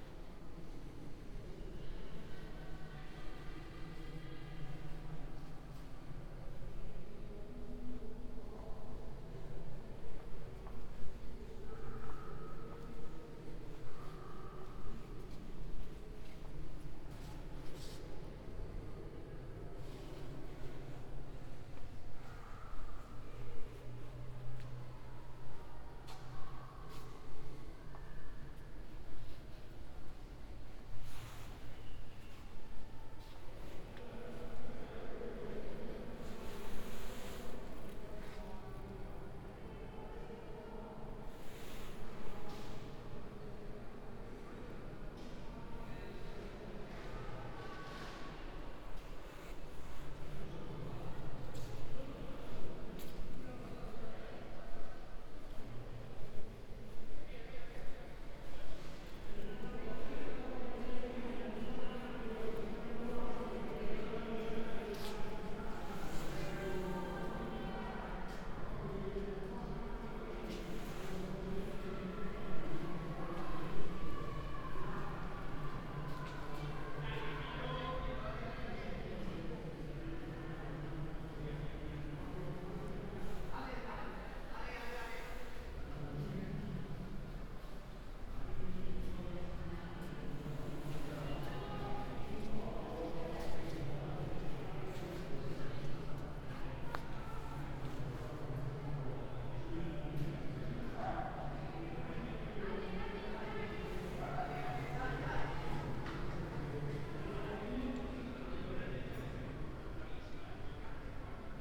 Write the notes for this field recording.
METS-Conservatorio Cuneo: 2019-2020 SME2 lesson1A, “Walking lesson SME2 in three steps: step A”: soundwalk, Thursday, October 1st 2020. A three step soundwalk in the frame of a SME2 lesson of Conservatorio di musica di Cuneo – METS department. Step A: start at 09:57 a.m. end at 10:14, duration of recording 17’29”, The entire path is associated with a synchronized GPS track recorded in the (kmz, kml, gpx) files downloadable here: